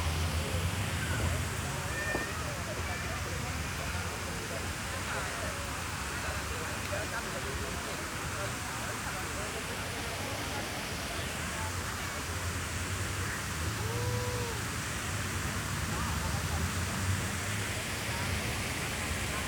Ebertplatz Köln, Deutschland - fountain, square ambience
after a long time, this fountain has been activated again, probably for a series of event in order to revive this place and attract people to stay. Ebertplatz was abandoned for a while, and seemingly has become a rather problematic neighbourhood.
(Sony PCM D50, Primo EM172)